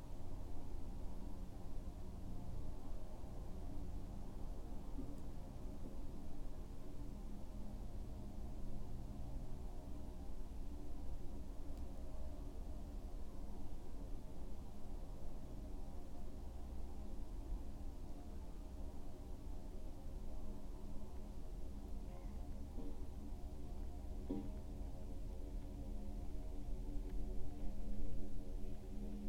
winter, in- and outside of the borehole
Istra, Croatia, 28 December 2012